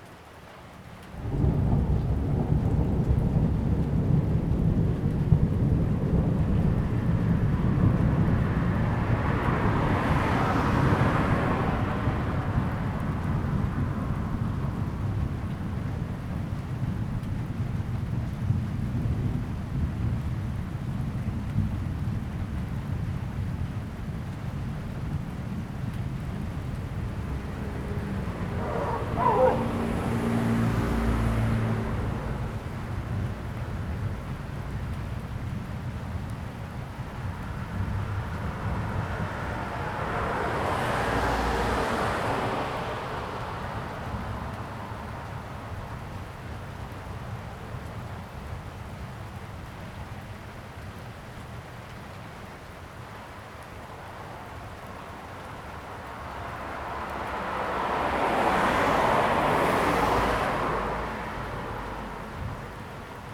Chengguang Rd., Chenggong Township - Traffic, rain, Thunder
Traffic Sound, The sound of rain, Thunder
Zoom H2n MS+XY
Chenggong Township, Taitung County, Taiwan, September 2014